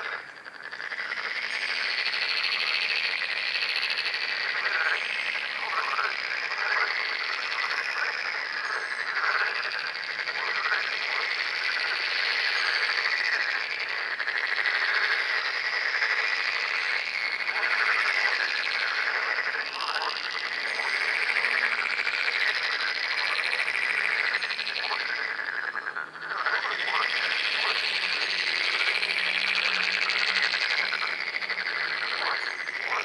Frogs chorus in local park. Also, occasionally, you can hear song of long-eared owl
31 May 2022, ~23:00